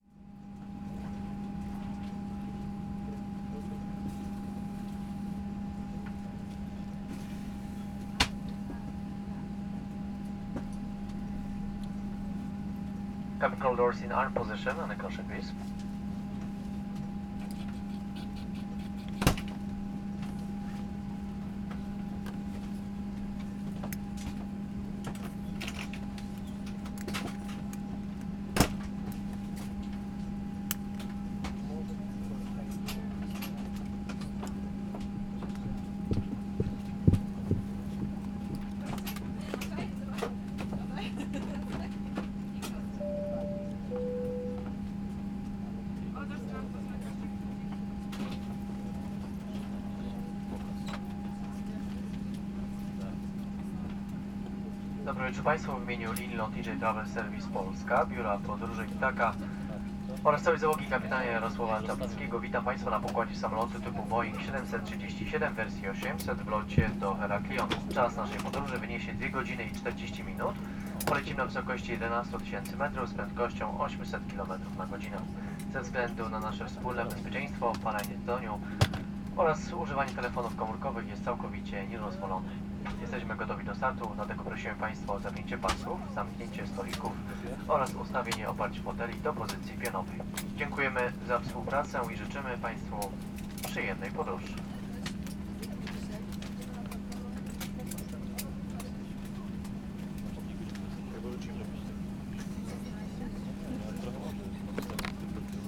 right after boarding a boeing 373. the pilot greets the passengers, gives details about the flight, explains safety instructions. excited conversations of those who fly for the first time and those who foresee the plane crashing. clinking safety belt buckles, gushing jet engines.
a lady coughing - she infected me with a bad clod eventually. interesting to have a recording of moment of being infected.
Poznań, Poland, 23 September 2012, 23:46